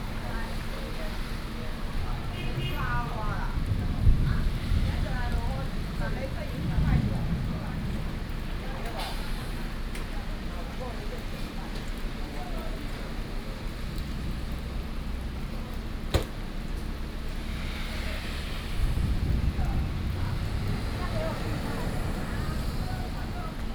Keelung, Taiwan - Thunderstorms and Traffic Sound
Thunderstorms, Traffic Sound
Keelung City, Taiwan